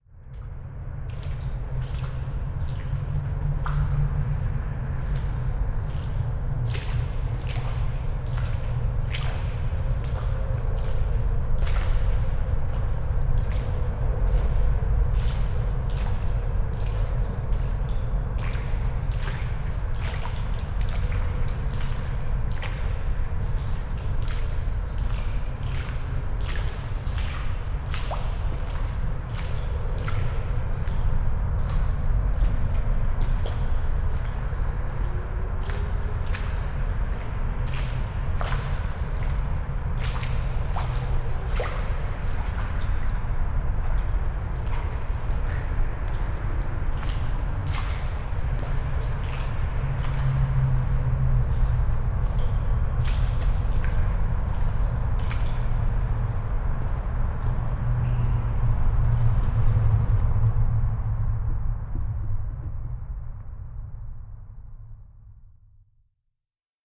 Williamson Creek, Austin, TX, USA - Walking in a Tunnel
Recorded with a pair of DPA 4060s and a Marantz PMD661